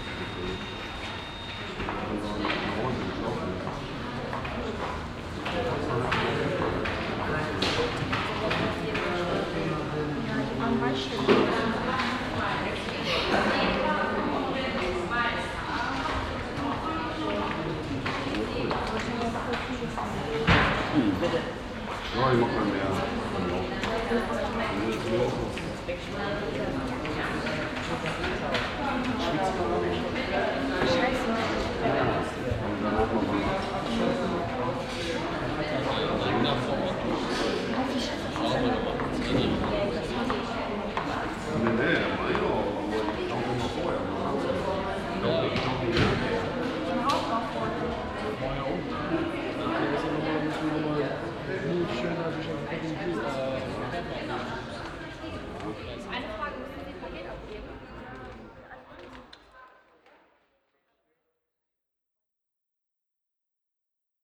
Stadtkern, Essen, Deutschland - essen, main post office
In der Essener Hauptpost. Der Klang elektronischer Geräte, Schritte und Stimmen in der hallenden Architektur.
Inside the main post office. The sound of electronic devices, steps and voices in the reverbing architecture.
Projekt - Stadtklang//: Hörorte - topographic field recordings and social ambiences